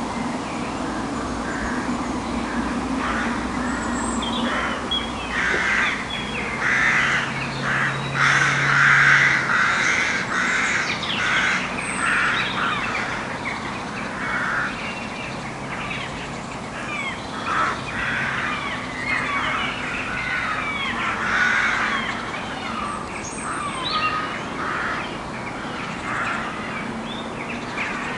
Rathgannon, Warrenpoint, Newry, UK - Rathgannon Competing Crow Colonies
Recorded with a Roland R-26 and a pair of DPA 4060s